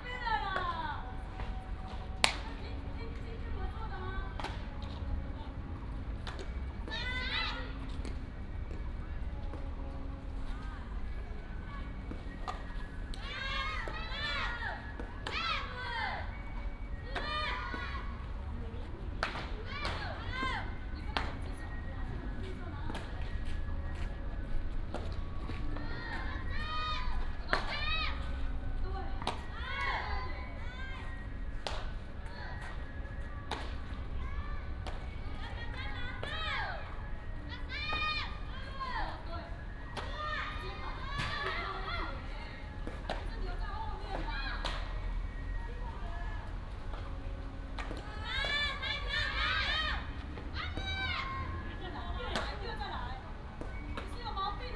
青年公園, Wanhua Dist., Taipei City - in the Baseball field
in the Park, Primary school students are practicing softball, traffic sound
Taipei City, Taiwan, 28 April 2017, 15:57